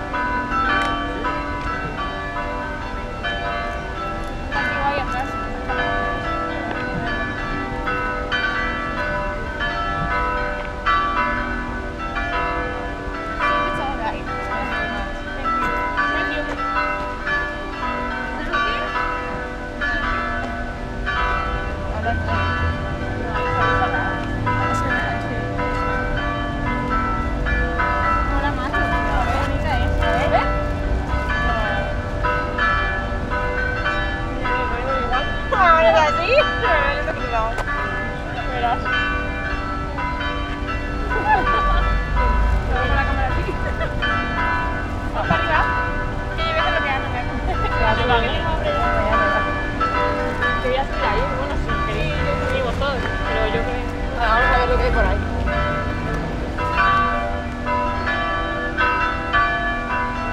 Brussels, Place du Petit Sablon.
The bells, Spanish tourists.
City of Brussels, Belgium, May 2011